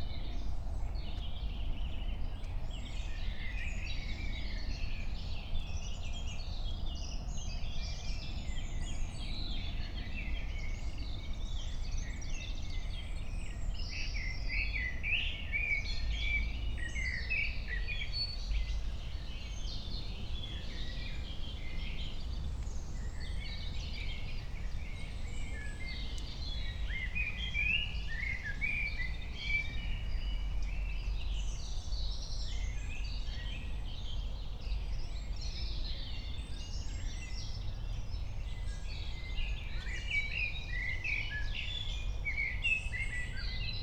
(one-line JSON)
{"date": "2021-06-04 21:01:00", "description": "21:01 Berlin, Königsheide, Teich - pond ambience", "latitude": "52.45", "longitude": "13.49", "altitude": "38", "timezone": "Europe/Berlin"}